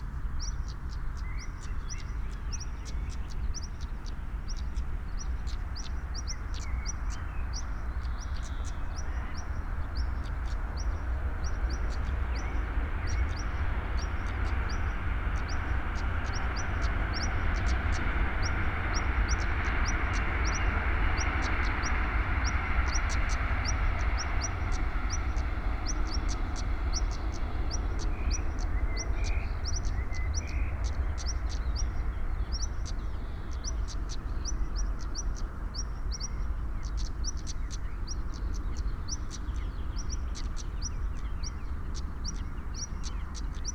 Am Sandhaus, Berlin Buch - European stonechat (Schwarzkehlchen)
near Moorlinse pond, two or more European stonechat (deutsch: Schwarzkehlchen) calling in the meadows, among others. noise of the nearby Autobahn, a train is passing by in the distance
(Sony PCM D50, Primo EM172)
Deutschland, 28 June 2020